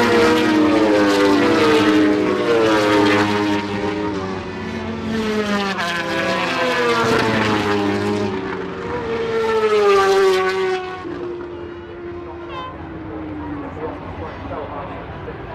Castle Donington, UK - British Motorcycle Grand Prix 2003 ... moto grandprix ...
Race ... part one ...Starkeys ... Donington Park ... mixture off 990cc four stroke and 500cc two strokes ...
Derby, UK, 13 July 2003